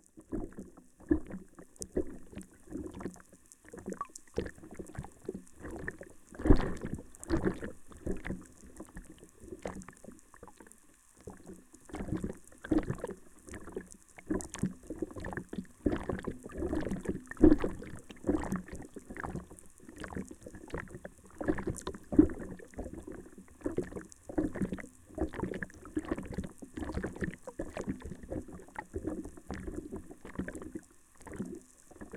Galeliai, Lithuania, bridge study
little wooden bridge on the lake. three hidden sounds sensors: hydrophone, geophone and vlf receiver
Utenos rajono savivaldybė, Utenos apskritis, Lietuva, 27 April 2020, 19:00